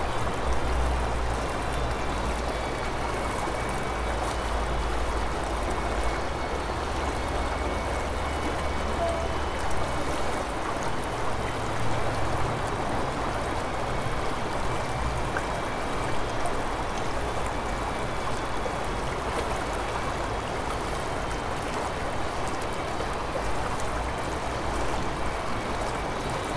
Brygidki, Gdańsk, Poland - Bells of St Bridget Church over the Radunia river
The noon bells of St Bridget Church over the Radunia river gurgling.
Apart from the standard city traffic noise there is the noise of glass polishers used nearby at the then newly built Heweliusza 18 office building.
Tascam DR-100 mk3, built-in Uni mics.
27 November 2019, 11:56